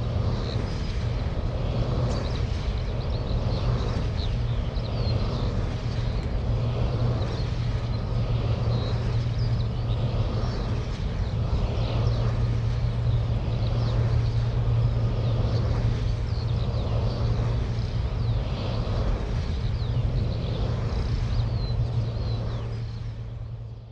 The first bird to sing this morning is a distant skylark.
Brandenburg, Deutschland